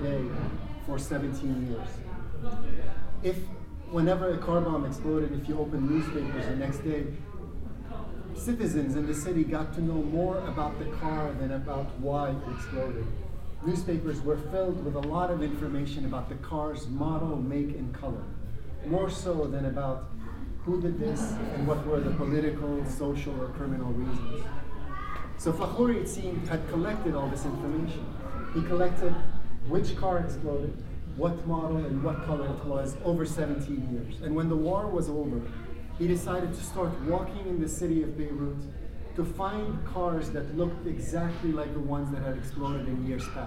Bildmuseet. Umeå.
Passing artist talk/tour